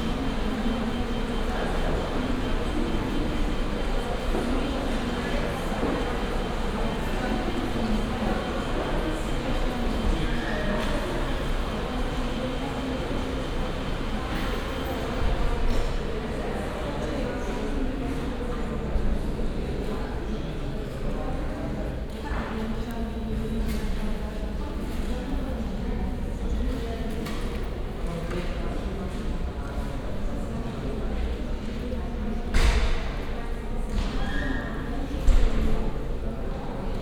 Poznan, Piatkowo district - PKO bank
(binaural) ambience of a specious hall where bank individual customers get their business handled. (sony d50 + luhd pm01bin)
Poznań, Poland, 21 September, ~14:00